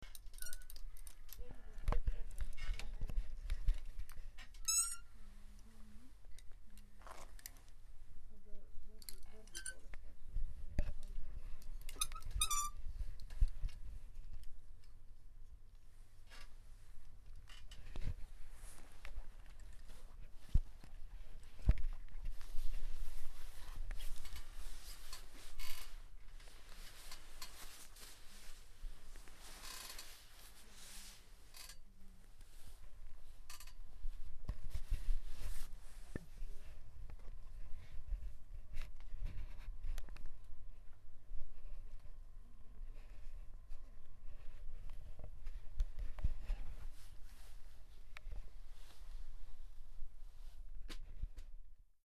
Inside the clothesshop in Baltimarket
clothesshop in Baltimarket near Baltijaam. (jaak sova)
18 April 2011, ~15:00